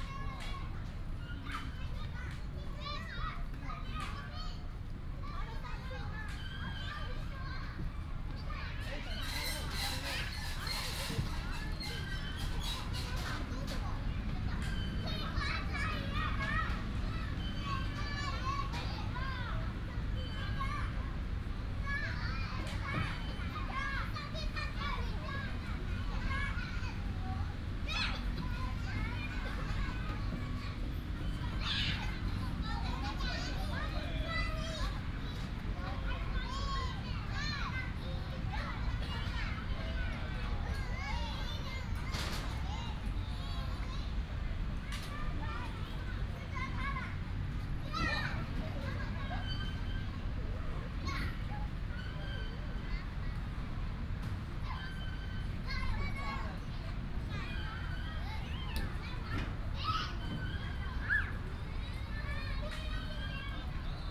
東山街孔廟廣場, Hsinchu City - Childrens play area
Childrens play area, in the park, traffic sound, Binaural recordings, Sony PCM D100+ Soundman OKM II